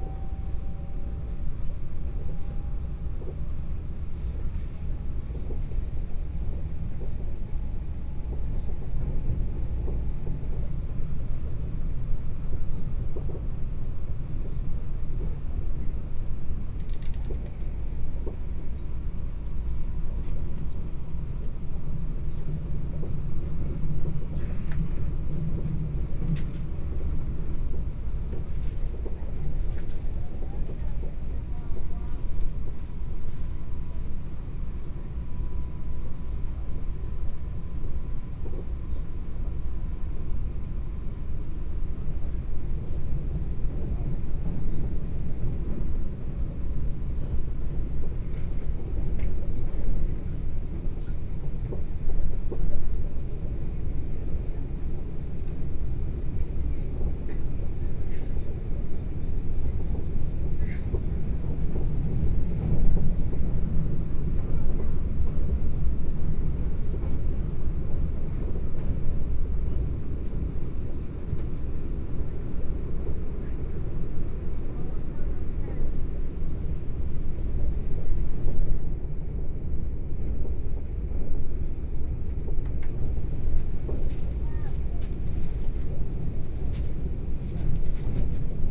Xinyi District, Taipei - Tze-Chiang Train
Train broadcast messages, from Taipei Station to Songshan Station, Zoom H4n+ Soundman OKM II
Taipei City, Taiwan, 7 November 2013, ~08:00